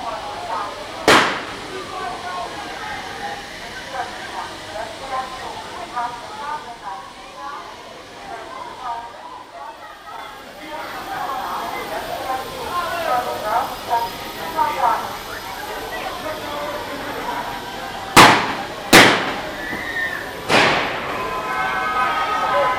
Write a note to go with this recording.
Having accompanied a friend to the train, I did a short recording with a Zoom H2n in surround mode as lots was happening: a large group of people with kids were waiting for a different train, a local one going to villages around the city. Kids were shouting and throwing firecrackers at each other. Once their train arrives, they climb in and the soundscape gives in to the more mechanical noises of the trains and signals (departing carriages, a locomotive passing by etc.)